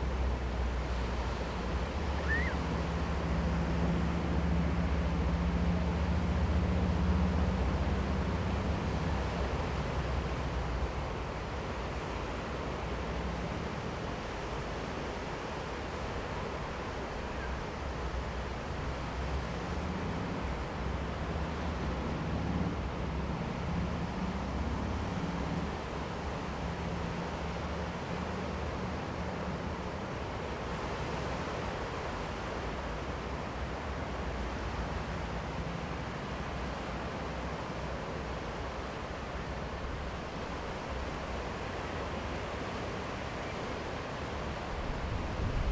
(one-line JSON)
{"title": "Japonia - Kamakura Seaside", "date": "2015-01-13 20:03:00", "description": "Seaside at Kamakura. Windsurfers, children playing. Recorded with Zoom H2n.", "latitude": "35.31", "longitude": "139.54", "altitude": "5", "timezone": "Asia/Tokyo"}